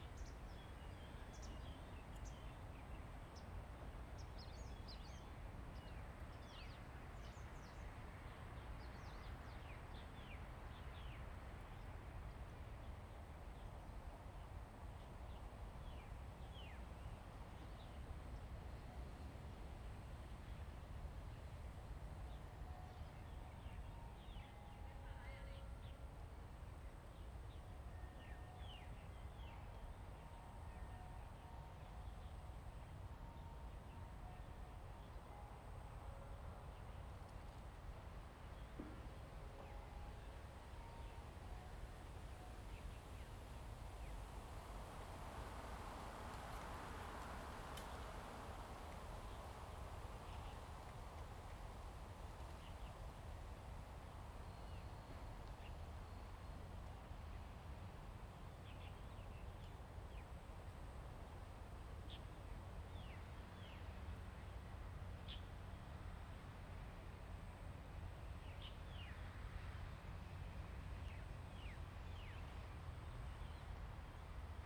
太湖, Kinmen County - At the lake
At the lake, Birds singing, Wind, In the woods
Zoom H2n MS+XY
福建省, Mainland - Taiwan Border, November 2014